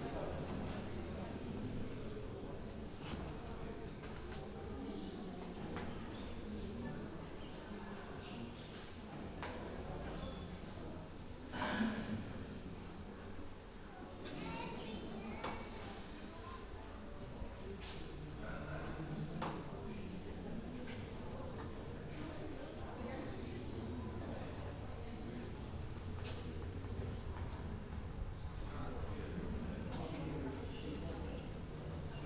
Tarnowo Podgorne, church - church ambience before wedding ceremony
guests entering the church, taking seats, talking, parents hushing up kids. the ceremony is about to begin.
Gmina Tarnowo Podgórne, Poland, 1 September 2012